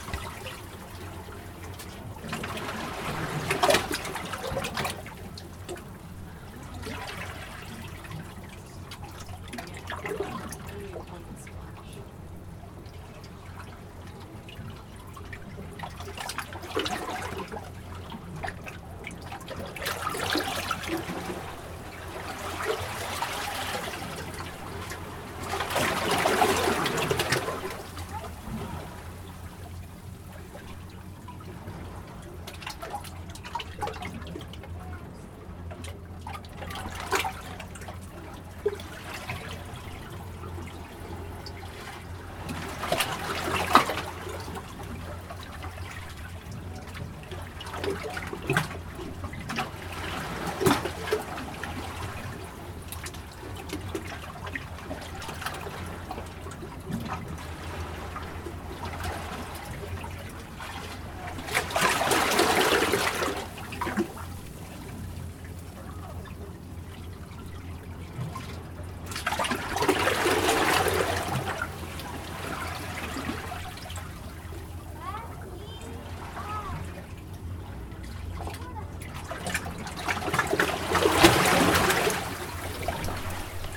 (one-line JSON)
{"title": "Rockport, MA, USA - Inside the Jetty", "date": "2012-05-26 15:05:00", "description": "I took a slight risk and placed my microphone recorder inside the rocks of the Jetty a little closer to the water. I didn't want to leave it for long.", "latitude": "42.66", "longitude": "-70.61", "altitude": "2", "timezone": "America/New_York"}